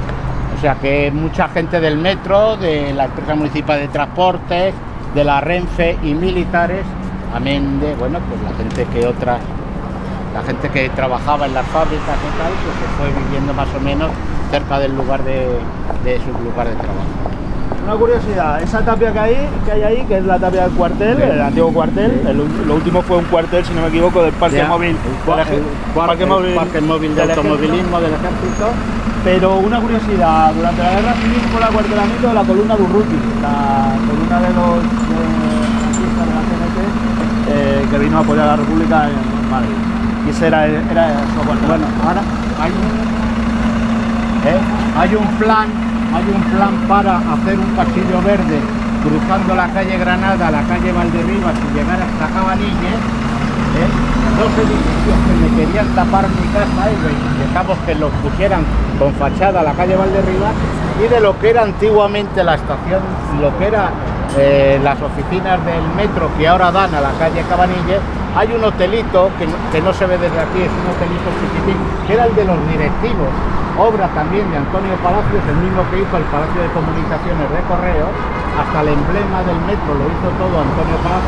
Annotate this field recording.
Pacífico Puente Abierto - Transecto - Calle Caridad